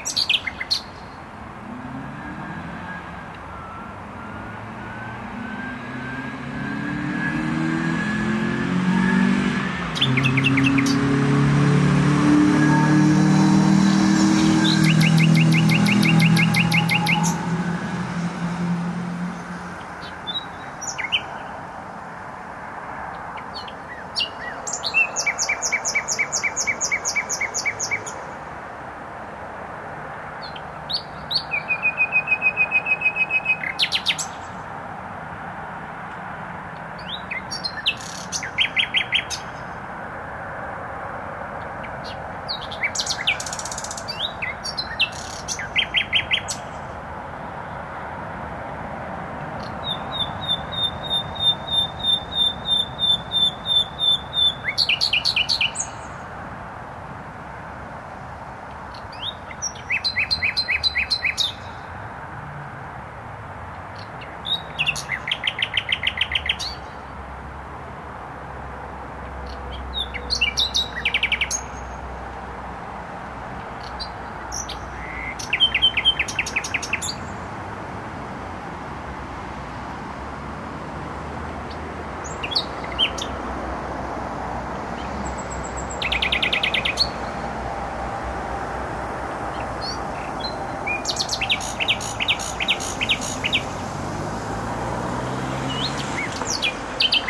Bródno-Podgrodzie, Warszawa, Polska - Trasa Toruńska Nightingale
Recording nightingale singing in bushes close to noisy motorway. Recorder: Olympus LS-11
Warszawa, Poland